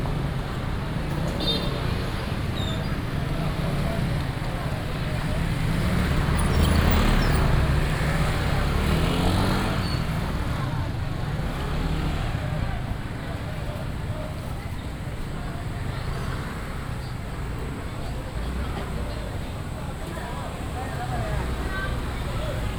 Longhua Rd., Longtan Dist. - Walking in the traditional market
Traditional market, Traffic sound